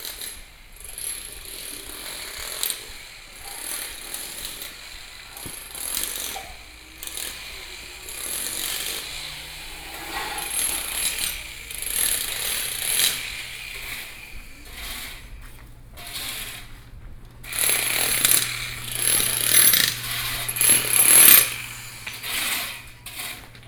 Shífēn St, Pingxi District, New Taipei City - Through the town's streets